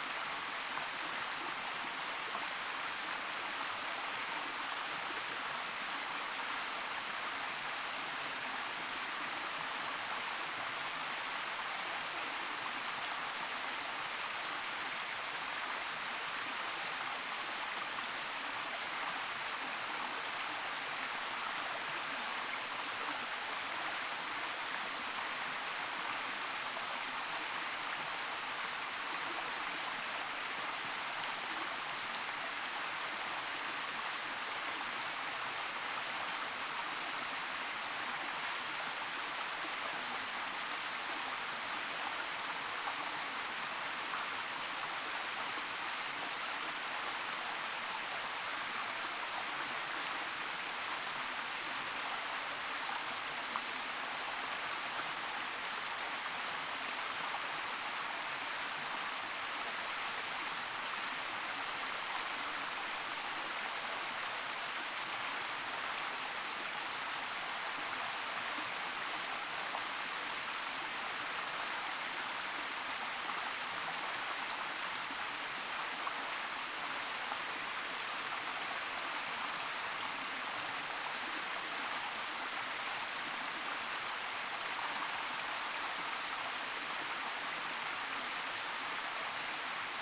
Conjunction between Chat To River & Lotus Stream, Maclehose Trail Sec., Tai Lam, Hong Kong - Conjunction between Chat To River & Lotus Stream
The recording is taken at a junction of the stream, Chat To River which is named for having 7 bridge constructed across as ""Chat"" is seven in Chinese, and Lotus Stream which is named for the stream from Lin Fa Shan (Lotus Hill) to the Tai Lam Chung Reservoir. You can listen to the soundscape of running water alongside some bird callings.
七渡河溪流建有有七條石橋橫跨連接路徑故而命名，蓮花石澗則起源自蓮花山流入大欖水塘，這點位於兩條水流的交匯點。你可以聽到流水伴著鳥鳴的聲景。
#Water, #Stream, #Bird